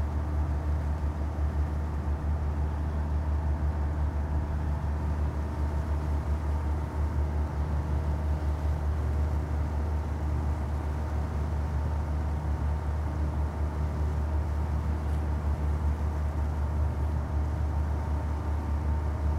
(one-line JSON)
{"title": "Rab, Ferry", "description": "Ferry aproaching Rab", "latitude": "44.70", "longitude": "14.87", "altitude": "6", "timezone": "Europe/Berlin"}